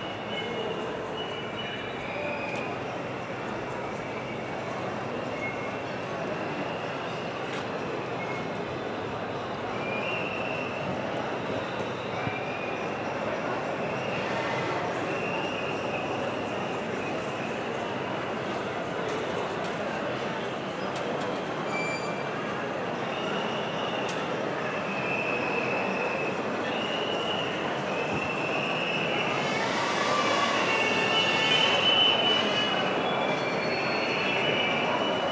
Zagreb, demonstrations for Varsavska - against devastation
demonstrations in center of Zagreb against devastation of the public pedestrian zone for private interest